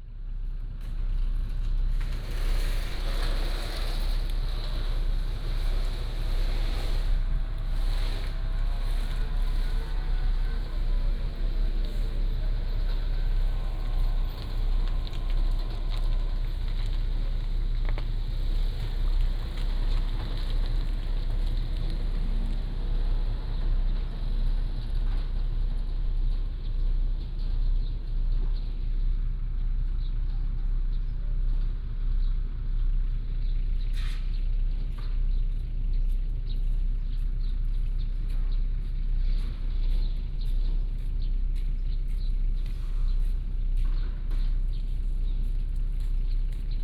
北寮漁港, Huxi Township - In the fishing port
In the fishing port